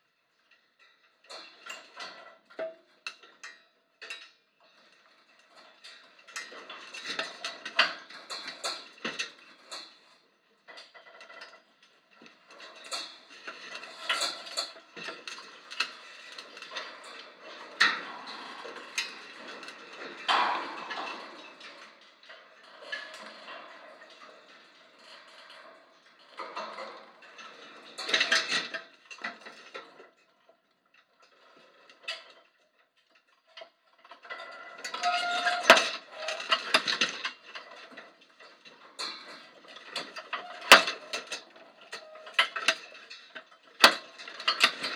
West Loop, Chicago, IL, USA - gate
contact mic recording of chain link fence next door to Baba Pita.
29 November 2014